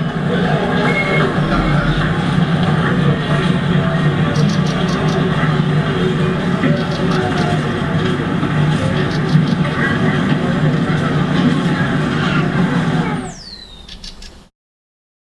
Sídliště Svobody, Phone booth
VNITRUMILIMETRU
Its site-specific sound instalation. Sounds of energic big cities inside bus stops and phone booths in small town.
Original sound record of Bejing by
Carlos Santos